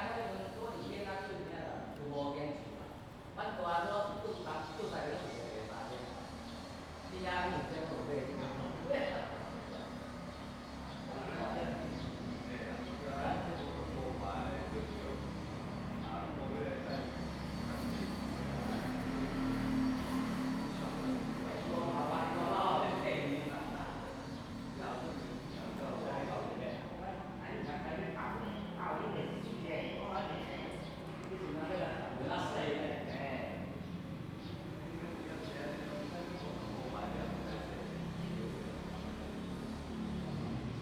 In the square, in the temple
Zoom H2n MS+XY
Penghu County, Baisha Township